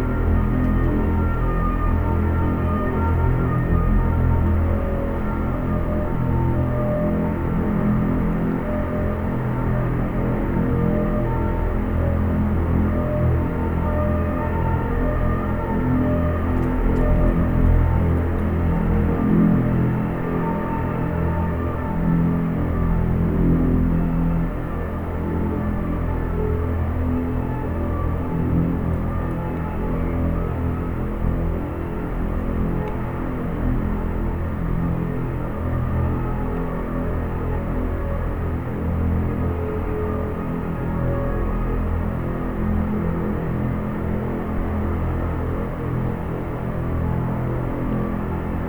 Chemin vert - PIPE SOUNDSCAPE
soundscape heard through a long plastic pipe on a construction site. No FX just a bit of noise filtering.